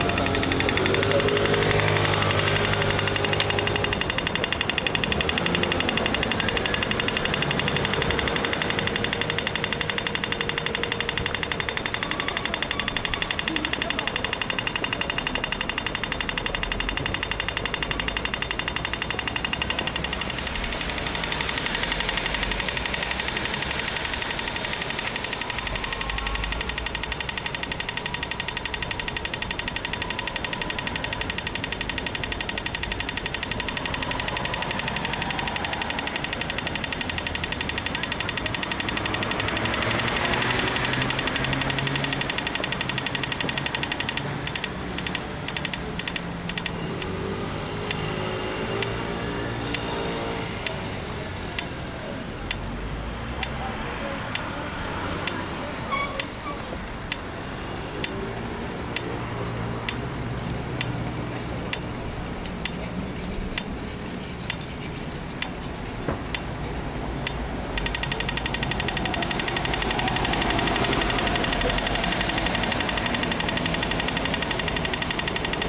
{
  "title": "Wilhelminasingel, Maastricht, Niederlande - Waiting for the green light",
  "date": "2017-09-22 16:36:00",
  "description": "A traffic lights steady acoustic signal, changing its rhythmic pattern just to repeat it again.",
  "latitude": "50.85",
  "longitude": "5.70",
  "altitude": "54",
  "timezone": "Europe/Amsterdam"
}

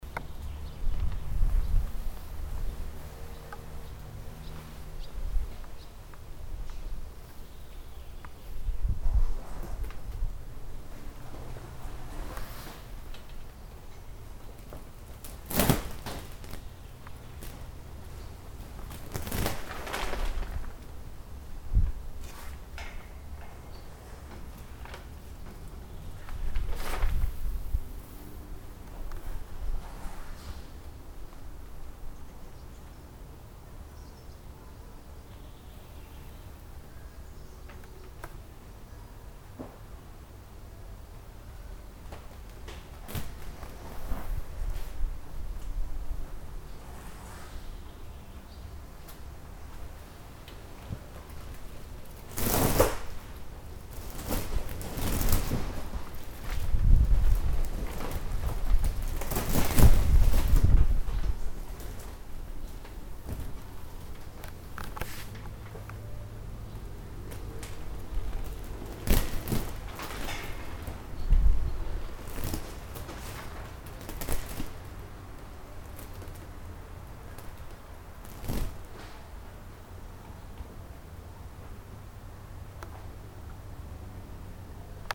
{
  "title": "schmallenberg, lenninghof, reiterhof, zeltplane",
  "description": "zeltplane auf grösserem zeltbau, flatternd im wind, nachmittags\nsoundmap nrw: social ambiences/ listen to the people - in & outdoor nearfield recordings",
  "latitude": "51.14",
  "longitude": "8.30",
  "altitude": "461",
  "timezone": "GMT+1"
}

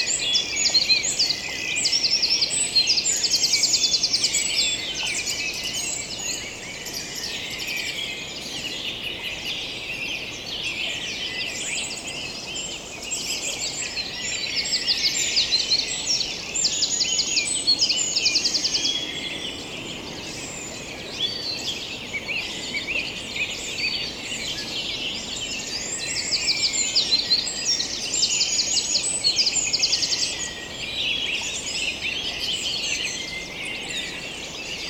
{
  "title": "Beaufort, France - Birds waking up during summer time",
  "date": "2017-06-08 04:50:00",
  "description": "They began 4:38 AM ! I'm sleeping outside, a great night just left alone on the green grass. And... ok, it's summer time and they began early ! Smashed, I just have a few forces to push on... and let the recorder work. It's a beautiful morning with blackbirds.",
  "latitude": "45.72",
  "longitude": "6.54",
  "altitude": "694",
  "timezone": "Europe/Paris"
}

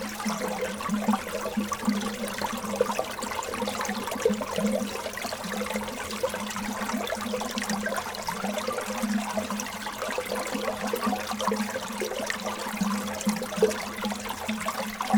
{
  "title": "Differdange, Luxembourg - Water flowing",
  "date": "2017-04-16 16:00:00",
  "description": "Into the underground ore mine, sound of water flowing in a tube.",
  "latitude": "49.52",
  "longitude": "5.87",
  "altitude": "421",
  "timezone": "Europe/Luxembourg"
}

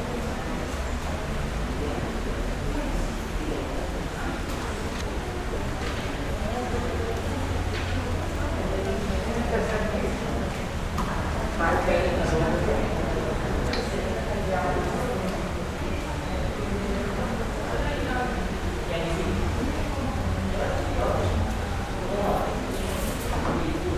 {
  "title": "Cra., Medellín, Antioquia, Colombia - Ambiente Biblioteca UdeM",
  "date": "2021-09-24 14:07:00",
  "description": "Descripción: Biblioteca de la Universidad de Medellín.\nSonido tónico: fuente, murmullos, sillas siendo arrastradas\nSeñal sonora: teclas de computador, pasos, hojas de cuaderno, llaves, persona tosiendo, abriendo y cerrando una cartuchera, poniendo lapiceros sobre la mesa.\nTécnica: grabación con Zoom H6 y micrófono XY\nGrupo: Luis Miguel Cartagena, María Alejandra Flórez, Alejandra Giraldo, Santiago Madera y Mariantonia Mejía",
  "latitude": "6.23",
  "longitude": "-75.61",
  "altitude": "1571",
  "timezone": "America/Bogota"
}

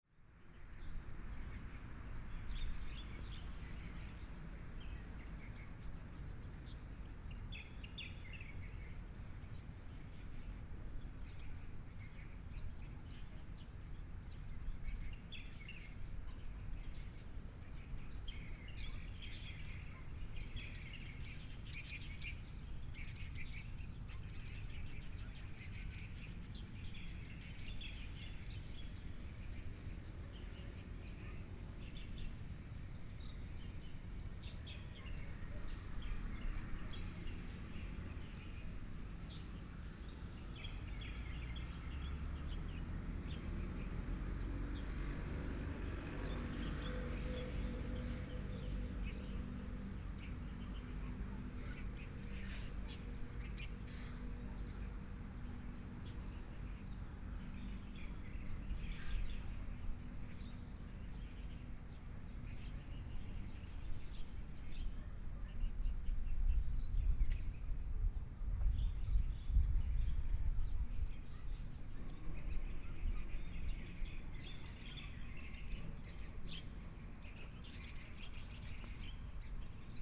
{
  "title": "南濱公園, Hualien City - in the Park",
  "date": "2014-08-29 05:23:00",
  "description": "Birdsong, Morning at Waterfront Park, The weather is very hot, Children and the elderly\nBinaural recordings",
  "latitude": "23.97",
  "longitude": "121.61",
  "altitude": "9",
  "timezone": "Asia/Taipei"
}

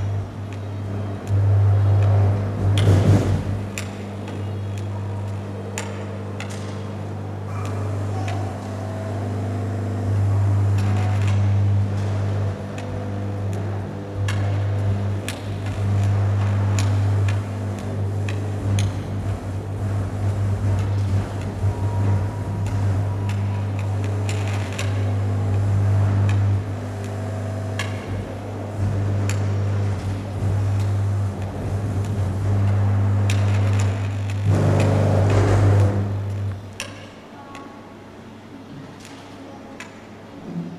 {
  "title": "linz lentos - see this sound",
  "date": "2009-12-22 17:10:00",
  "description": "see this sound",
  "latitude": "48.31",
  "longitude": "14.29",
  "altitude": "259",
  "timezone": "Europe/Vienna"
}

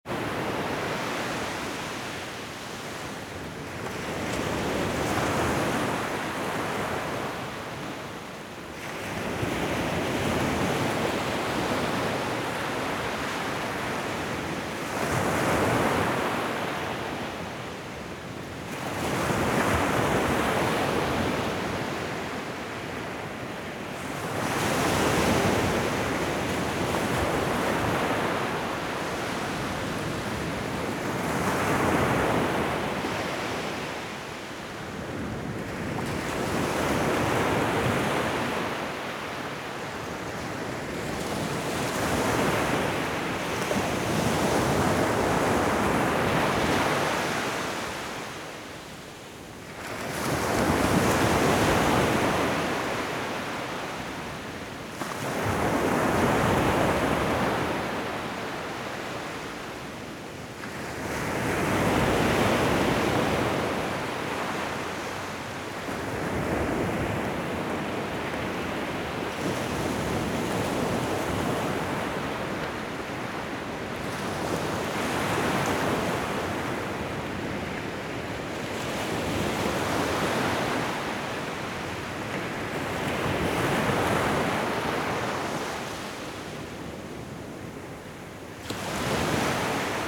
{
  "title": "Klong Muang Beach - Wave on the beach in Thailand, at 20 meters form the water, during the night",
  "date": "2018-10-19 00:30:00",
  "description": "During the night at Klong Muang Beach in Thailand, waves at 20 meters.\nRecorded by an ORTF Setup Schoeps CCM4x2 in a Cinela Windscreen\nRecorder Sound Devices 633\nSound Ref: TH-181019T03\nGPS: 8.048667,98.758472",
  "latitude": "8.05",
  "longitude": "98.76",
  "altitude": "1",
  "timezone": "Asia/Bangkok"
}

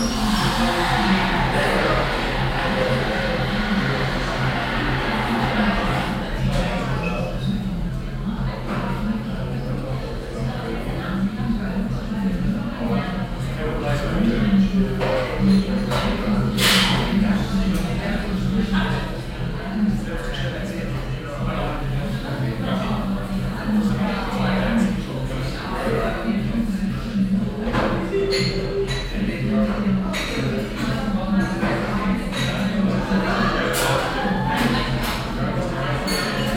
{
  "title": "haan, kaiserstrasse, cafe könig",
  "description": "morgens im cafe könig, stimmengewirr, hintergrundsmusik, cafemaschine\nsoundmap nrw:\nsocial ambiences, topographic fieldrecordings, listen to the people",
  "latitude": "51.19",
  "longitude": "7.01",
  "altitude": "167",
  "timezone": "GMT+1"
}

